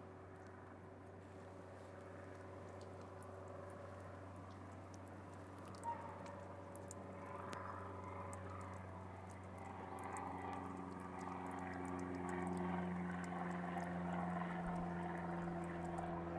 Via Gioacchino Rossini, Cantù Asnago CO, Italy - Train station with train announcement.
High speed train incoming, then an announcement on the loudspeaker, sounds of road works, an airplane and a second train.
Recorded on a Zoom N5. Low-pass filter.
ig@abandonedsounds
Lombardia, Italia